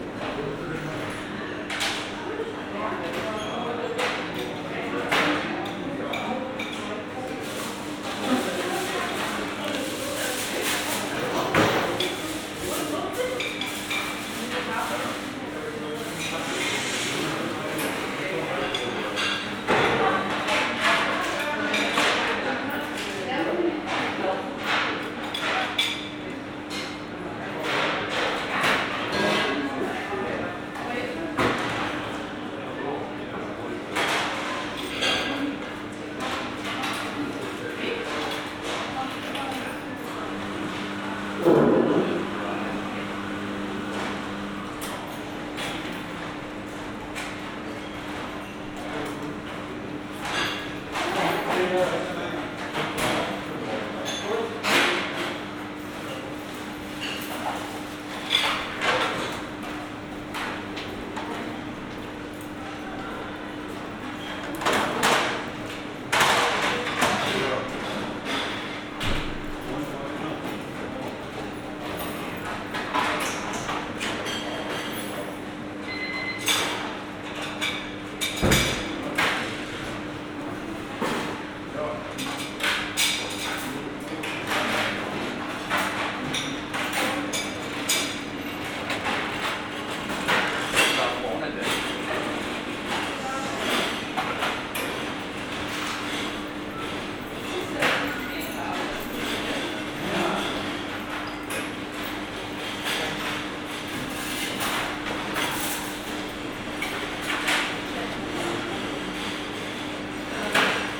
berlin, walterhöferstraße: zentralklinik emil von behring, kantine - the city, the country & me: emil von behring hospital, cafeteria
busy staff members, guests
the city, the country & me: september 6, 2012